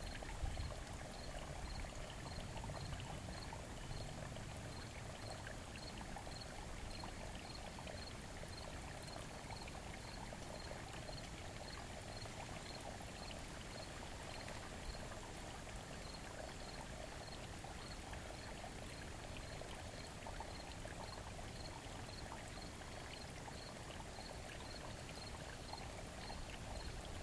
Cascades, Belgrade, Missouri, USA - Cascades
Recording between two cascades in a shut-in tributary of the Black River